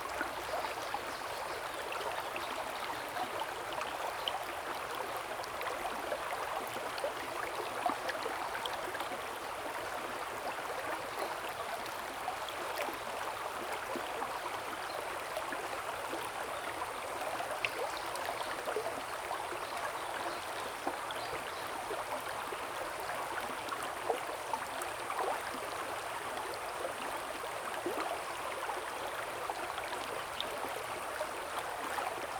The sound of water streams, birds
Zoom H2n MS+XY